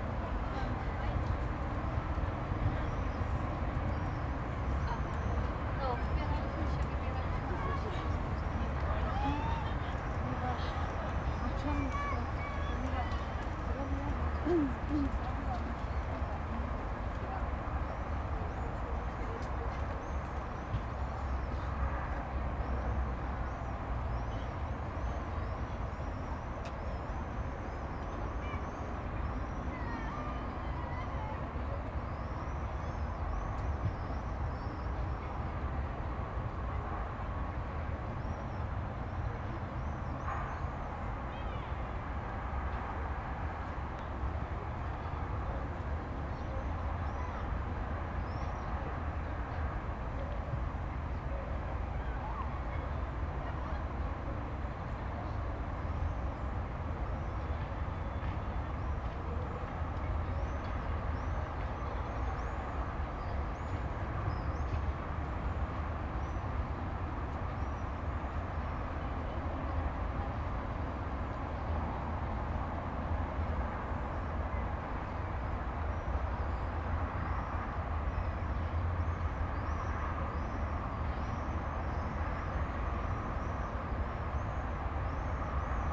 Kazimierz, Kraków, Polska - Summer in the city
Summer afternoon at Wisła river bank. You can hear the city sounds in the distance.
Krakow, Poland